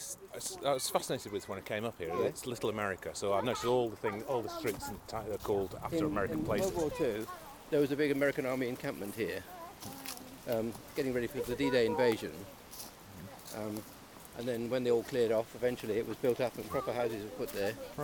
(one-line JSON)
{"title": "Efford Walk Two: Little America - Little America", "date": "2010-09-24 16:12:00", "latitude": "50.39", "longitude": "-4.10", "altitude": "72", "timezone": "Europe/London"}